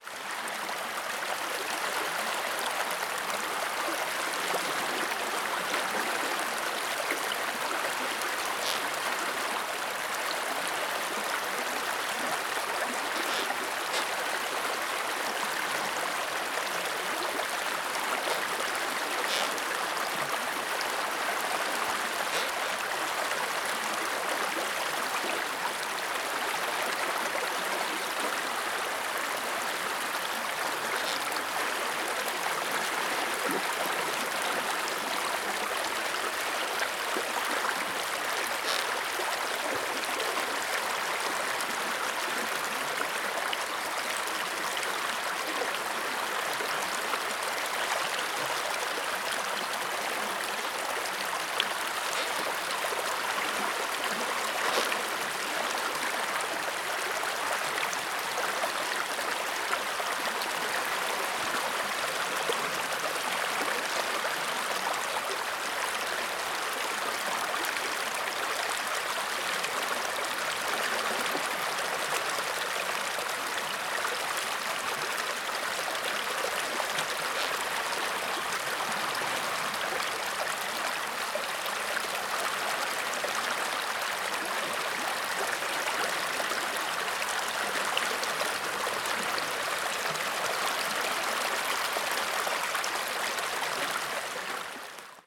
Stoumont, Belgium - Surface movement
Water sounds from the Amblève river running near Stoumont, in the Ardennes, Belgium.
Liège, Wallonie, België / Belgique / Belgien